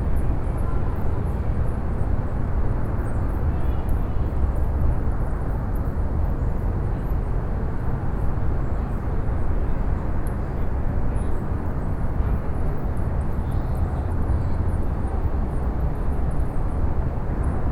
Austin, Lady Bird Lake Trail, Bats
USA, Austin, Texas, Bats, Binaural
Austin, TX, USA, 11 November 2011